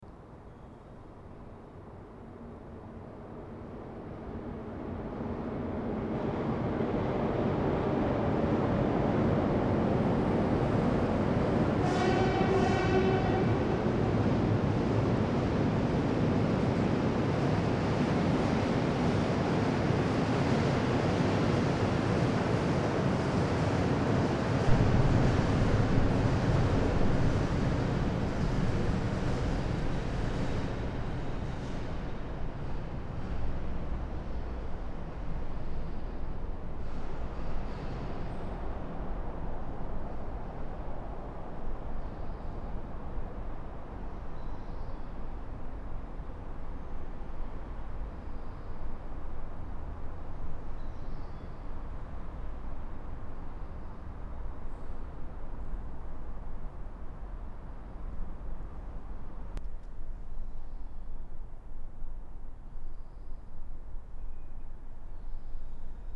Vila Franca de Xira, Portugal - tube resonance
recorded inside a metallic ventilation tube while the train passes by. recorder:M-audio Microtrack+ Canford Audio MS preamp, microphone Akg- blue line with hyper cardioid capsule
11 April, 16:47